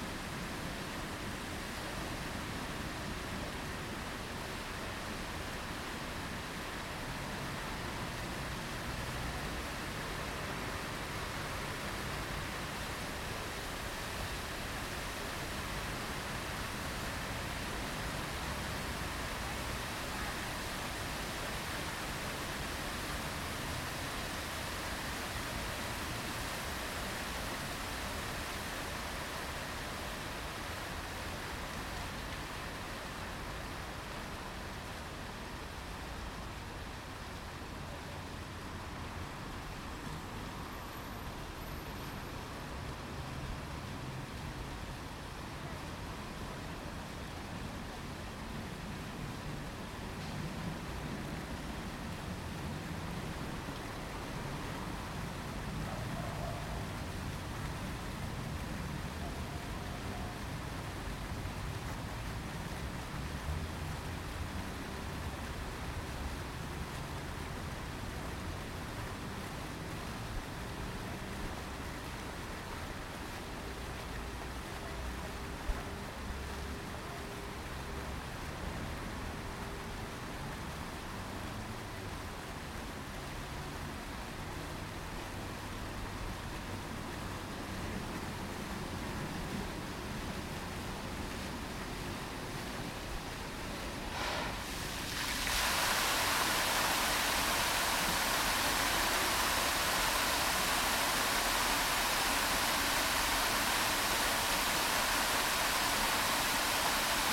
Full sound cycle of fountain @ Dabrowskiego square in Łódź
Łódź, Poland, 17 September 2014, 13:51